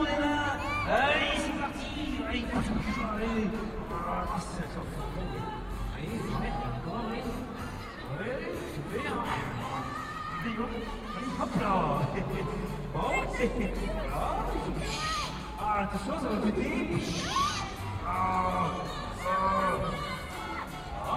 Poitiers, Central Place - Carnival, Tombola
Small town carnival in preperation